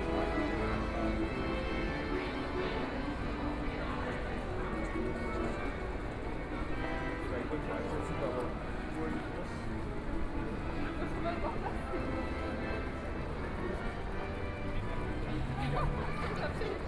Altstadt, Munich - soundwalk
walking in the Street
Munich, Germany, May 2014